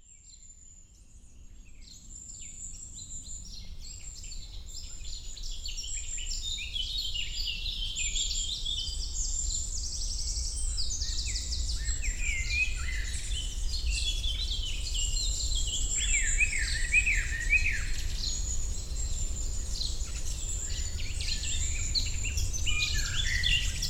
Thuin, Belgique - Birds in the forest
Eurasian Blackcap, Common Chaffinch, Blackbird, Robin, juvenile Great Tit.
Thuin, Belgium